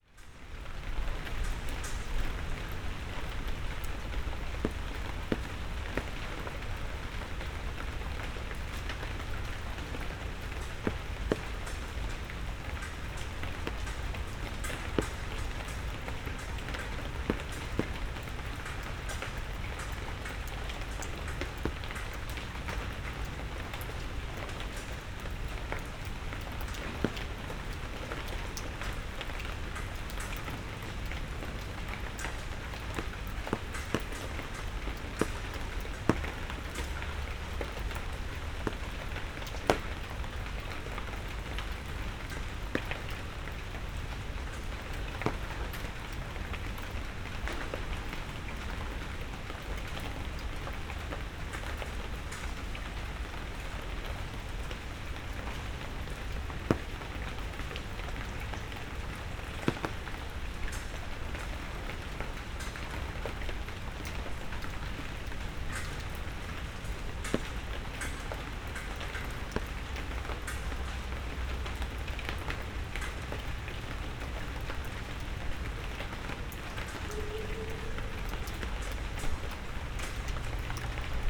berlin, friedelstraße: unter markise - the city, the country & me: in front of a café
under the awning of the café
the city, the country & me: july 7, 20122
99 facets of rain